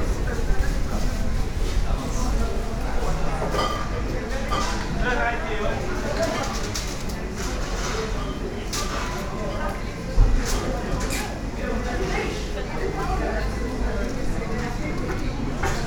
Paris, Marché des Enfants Rouges, market ambience
short walk around the roof-covered market
Paris, France, May 2011